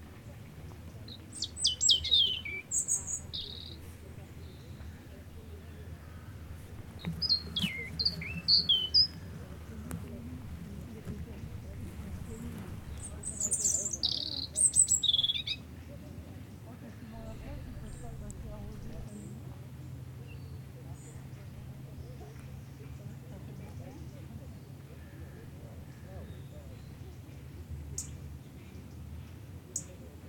Plage de Mémard, Aix-les-Bains, France - Tout près
Couché sur le côté dans l'herbe pour abriter le ZoomH4npro du vent, un rouge-gorge chante tout près et vient se poser à 2mètres échange de regards, il n'est pas craintif, il rejoint son perchoir dans l'arbre et délivre une série de cliquetis. Passants sur le chemin proche, rumeurs de la ville au loin.